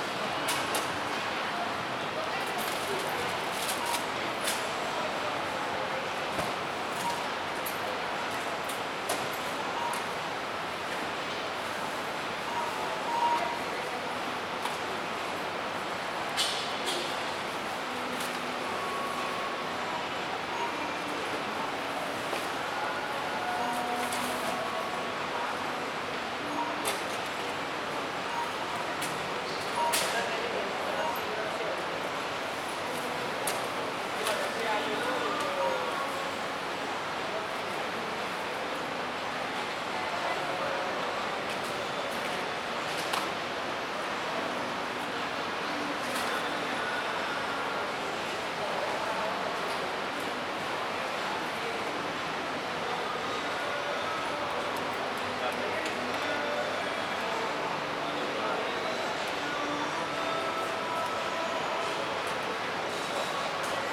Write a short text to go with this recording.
ripresa effettuata vicino ai registratori di casse del Conad